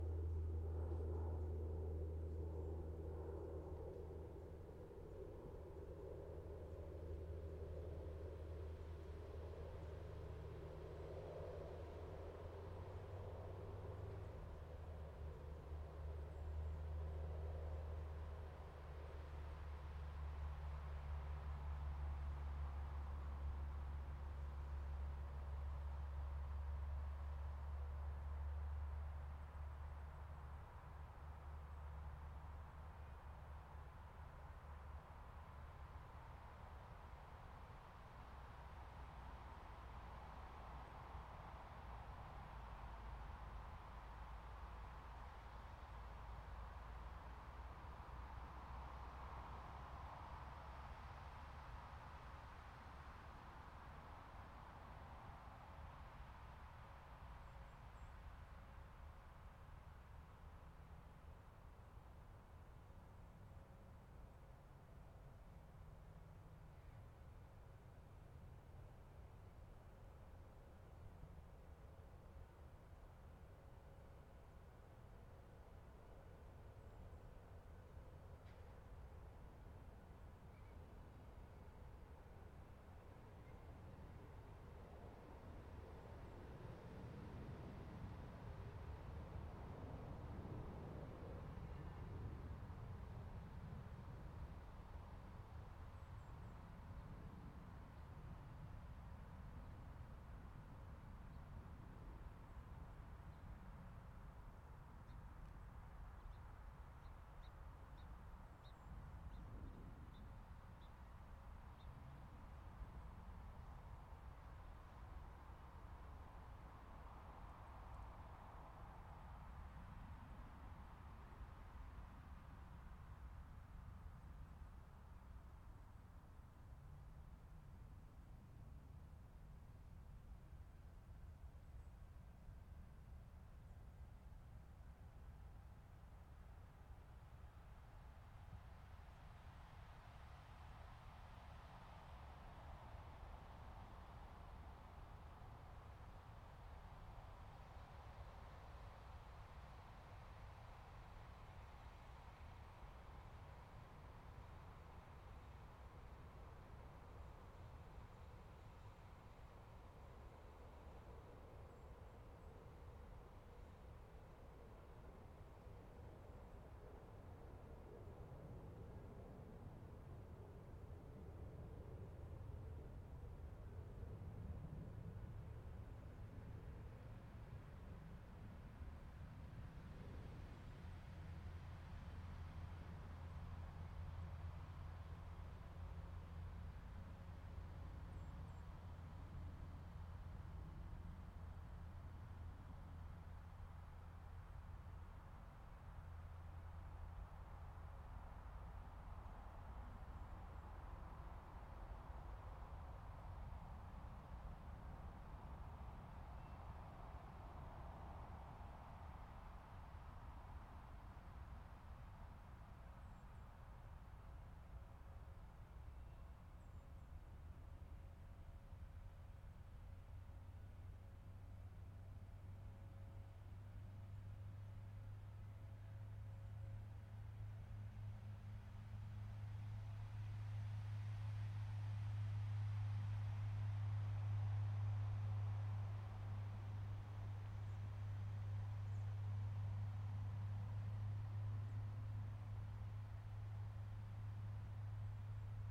Robert Morris Earthwork/Johnson Pit #30 - Johnson Pit #30
A recording from within Robert Morris' monumental piece of Land Art 'Untitled'/'Johnson Pit #30'.
Created out of a defunct gravel pit, 'Johnson Pit #30' was long situated in the middle of farmland. With encroaching development pollution (both in the traditional sense and as sound pollution) has taken its toll on the work.
Part of a series of field recording in Earthworks/Land art.
Kent, WA, USA